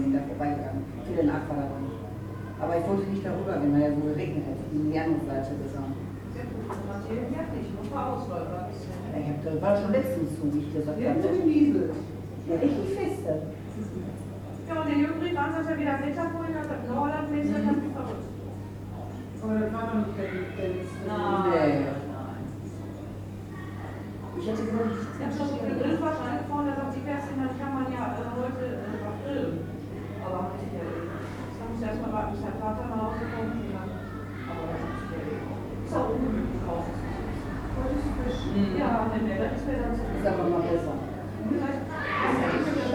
gelsenkirchen-horst, markenstrasse - gelsenkirchen-horst, horster café